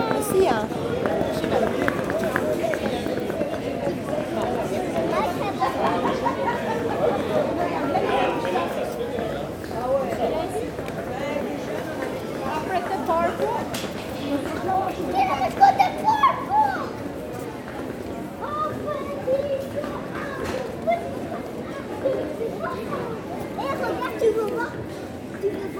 Brussel, Belgium - The restaurants street
Walking into the narrow 'rue des Bouchers', where every house is a restaurant, and where every restaurant is a tourist trap ! At the end of the walk, after the Delirium bar, the Jeanneke Pis, a small baby pissing, but this time it's a girl ! Sound of tourists, clients in the bar and a small dog.
25 August 2018